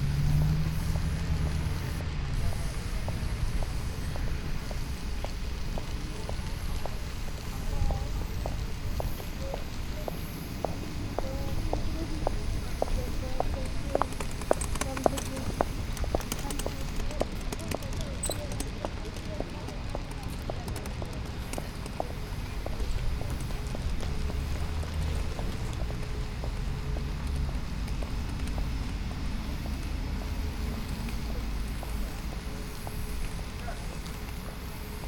Poznan, Sobieskiego housing estate - paiting a zebra
Two workers painting a zebra crossing with spray paint. one operating the machine the other shaking the cans, separating the empty ones, knocking them on the road. Talking. Hum of the industrial vacuum cleaner coming form the auto cleaner in the background. Sounds of the nearby tram loop.
Poznan, Poland, 18 April